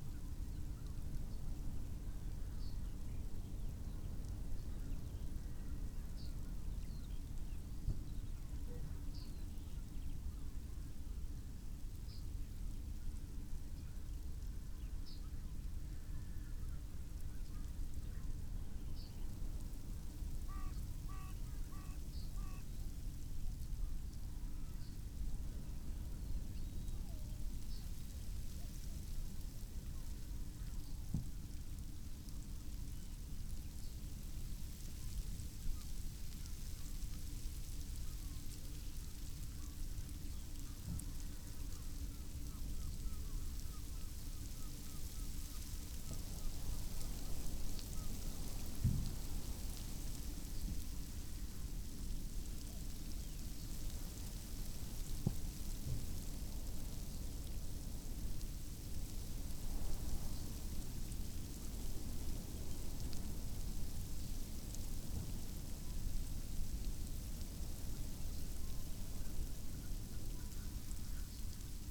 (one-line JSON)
{"title": "Green Ln, Malton, UK - under a hedge ... wind ... snow showers ...", "date": "2021-04-11 08:08:00", "description": "under a hedge ... wind ... snow showers ... xlr SASS to Zoom H5 ... bird calls ... crow ... yellowhammer ... skylark ... pheasant ... buzzard ... taken from unattended extended unedited recording ...", "latitude": "54.12", "longitude": "-0.56", "altitude": "89", "timezone": "Europe/London"}